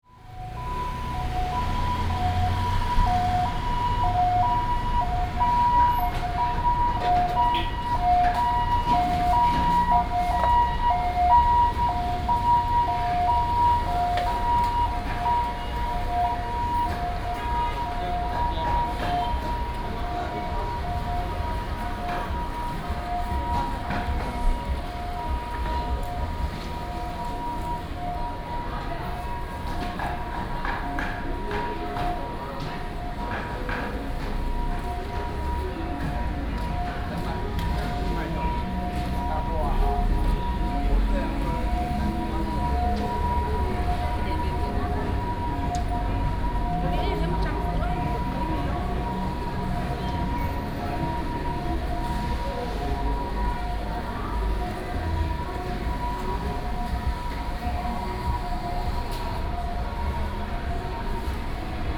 龜山黃昏市場, Taoyuan City - Evening market
Evening market, Traffic sound, ambulance
Taoyuan City, Taiwan